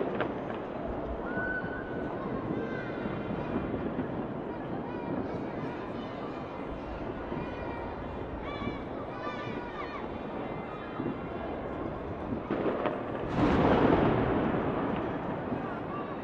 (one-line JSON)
{"title": "NEW YEAR Fireworks 1800-078 Lisboa, Portugal - 2021 NEW YEAR Fireworks", "date": "2020-12-31 23:53:00", "description": "New year 2021 fireworks. Recorded with a SD mixpre and a AT BP4025 (XY stereo).", "latitude": "38.76", "longitude": "-9.12", "altitude": "95", "timezone": "Europe/Lisbon"}